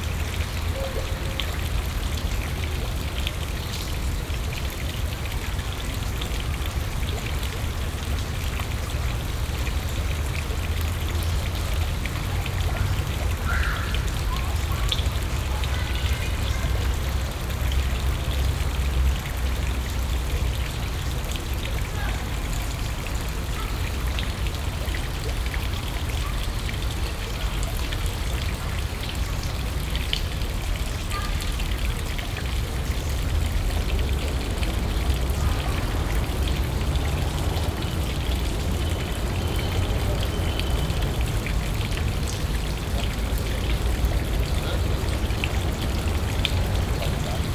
Sao Paulo, parque Trianon fountain
- Jardim Paulista, São Paulo, Brazil